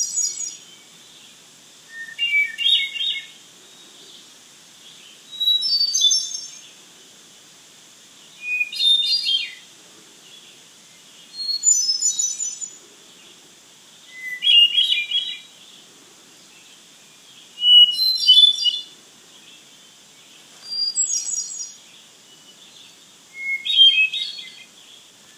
Ledge overlooking Ashokan Reservoir on the Phoenicia-East Branch Trail, Shandaken, NY, USA - Catskill sunrise birdsong and breeze
June 2014